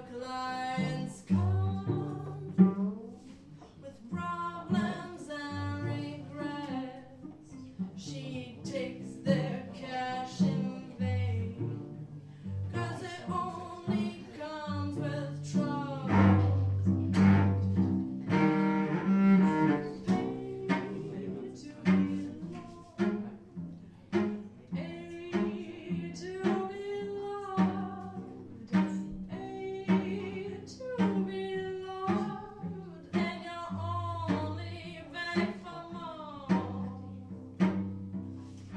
ashia grzesik - pay to be loved, live at mama
the city, the country & me: may 8, 2008
Berlin, Germany, 8 May, 22:37